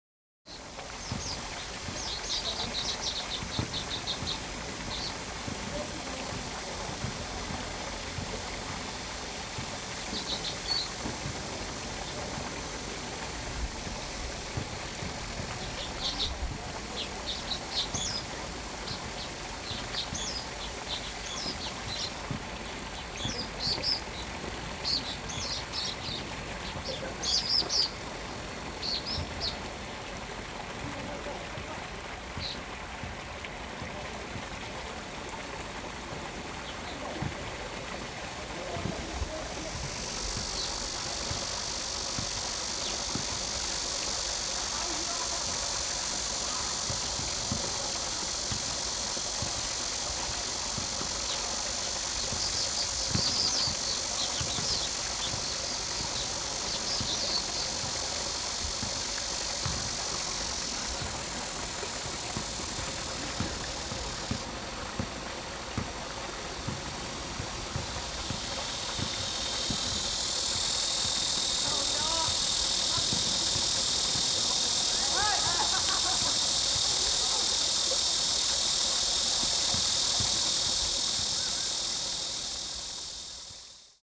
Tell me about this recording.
Cicadas and Stream, Rode NT4+Zoom H4n